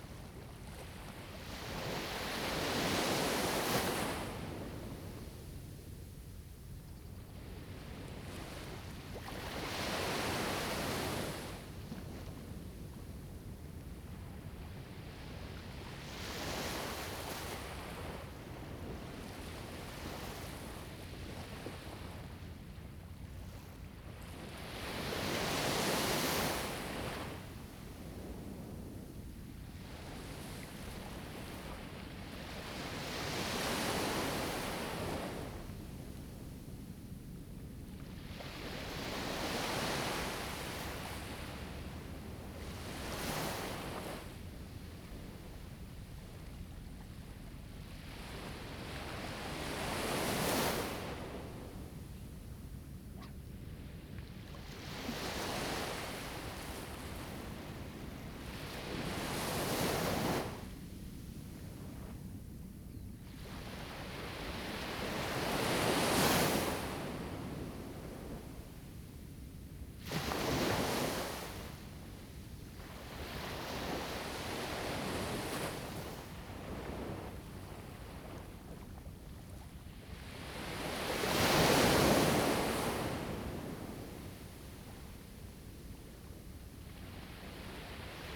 林投金沙灘, Huxi Township - Sound of the waves
In the beach, Sound of the waves
Zoom H2n MS +XY